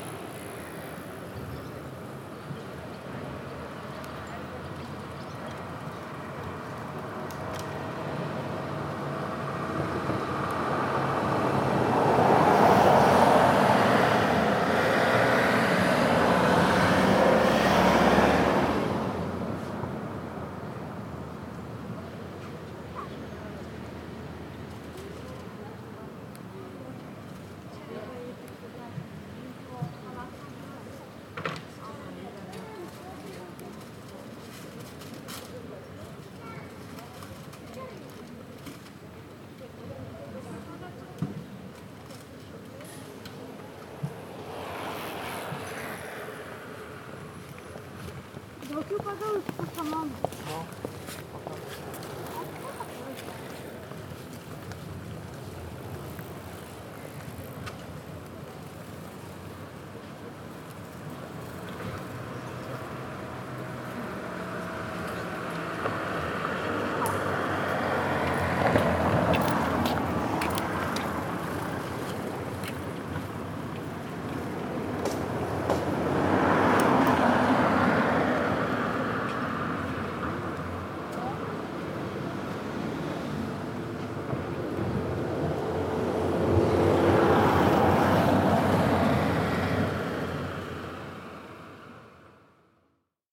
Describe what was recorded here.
Recordist: Liviu Ispas, Description: On a bench in front of the Healthcare Center on a sunny day. People, bikes, cars, motorcycles. Busy traffic and people talking. Recorded with ZOOM H2N Handy Recorder.